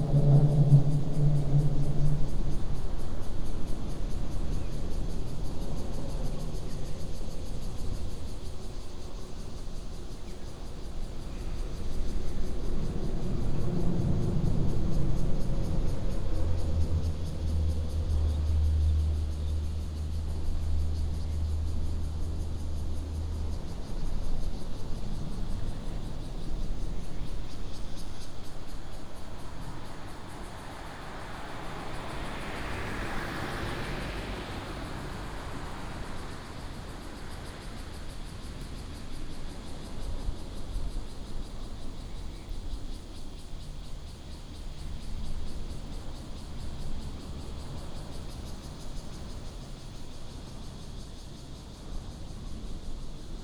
竹21鄉道, Guanxi Township, Hsinchu County - Under the high-speed high road
Under the high-speed high road, Traffic sound, Cicadas, Bird call, Binaural recordings, Sony PCM D100+ Soundman OKM II